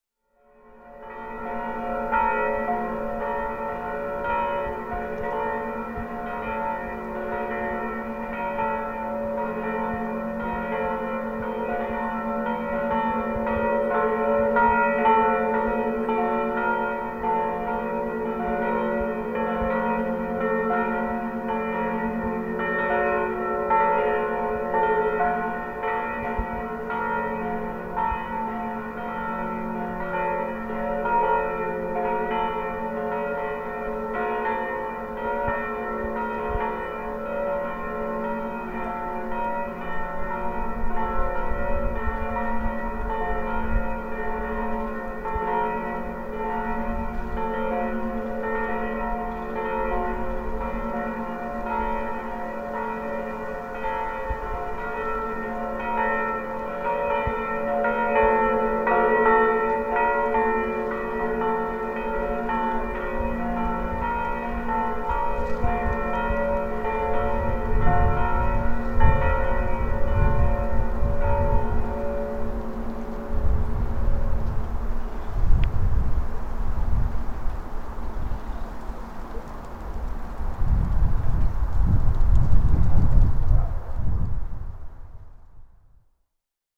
Myslenice, Poland - (883) Distant bells
Distant bells recorded from a hill.
Recorded with Olympus LS-P4.
powiat myślenicki, województwo małopolskie, Polska, 15 January 2022, 12:00